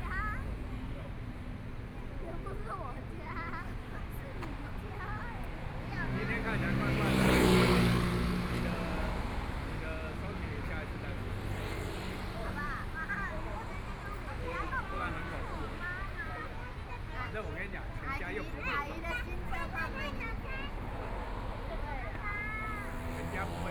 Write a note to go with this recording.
walking on the Road, Traffic Sound, Binaural recordings, Zoom H4n+ Soundman OKM II